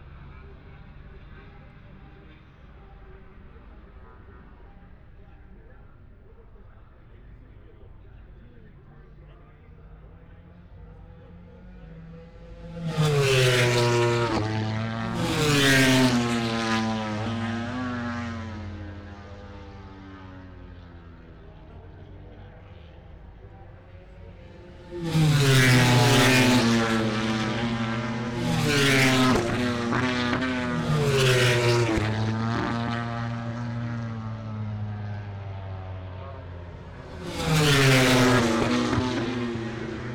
Silverstone Circuit, Towcester, UK - british motorcycle grand prix ... 2021
moto grand prix qualifying two ... wellington straight ... dpa 4060s to MixPre3 ...
England, United Kingdom